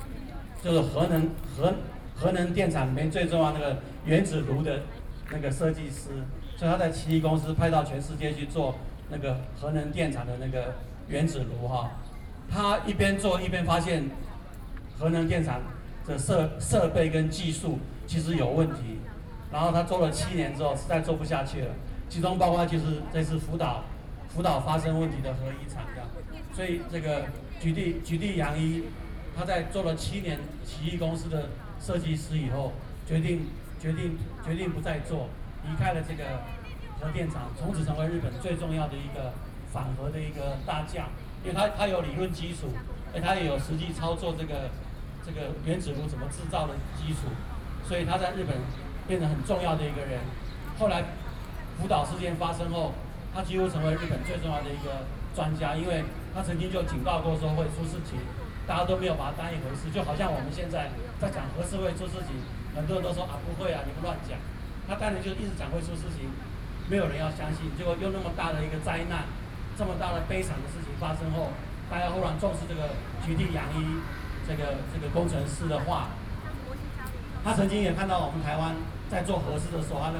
Chiang Kai-shek Memorial Hall, Taipei - Band performances
against nuclear power, Band performances, Sony PCM D50 + Soundman OKM II
中正區 (Zhongzheng), 台北市 (Taipei City), 中華民國, 14 June 2013